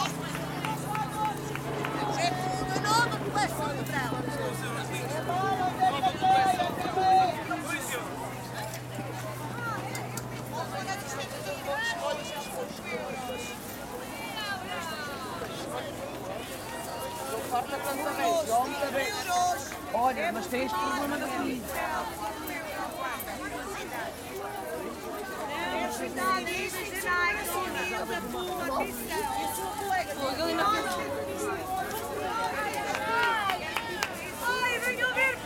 It´s a busy Thursday morning selling clothes and goodies.
Wandering around the area.
Recorded with Zoom H6.